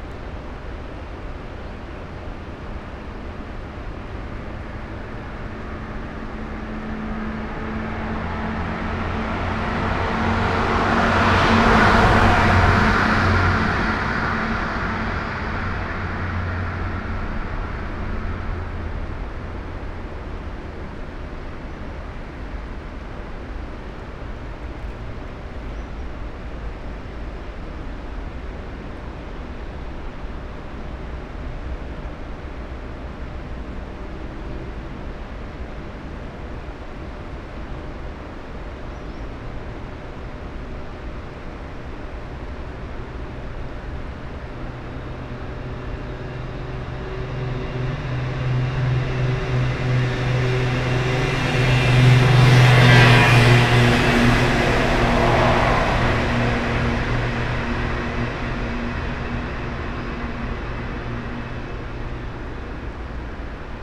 Meljski Hrib, Maribor, Slovenia - waiting for river gulls to give some voice
road and river sonic scape, Drava is very shallow and fast here, gulls find their standing stones here
8 August 2013, 07:23